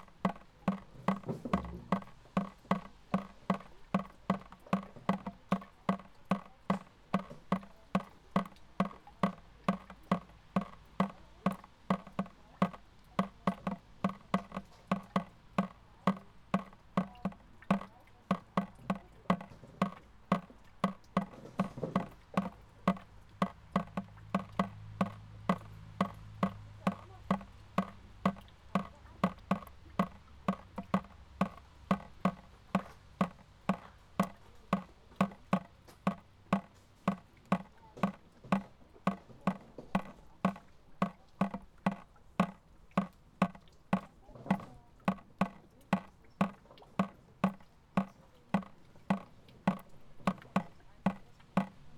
berlin, schwarzer kanal, another bucket
water drops in a bucket, stereo recording
4 August, 23:19